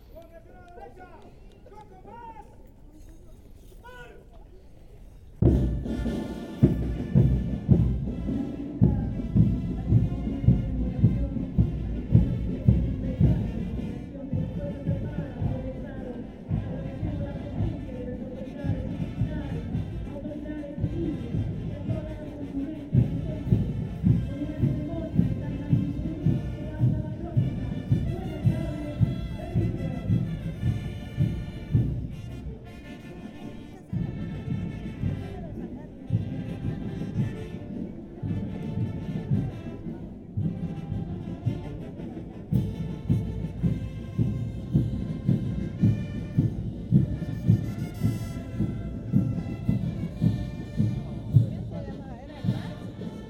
Colegio Militar, La Paz - Colegio Militar
por Fernando Hidalgo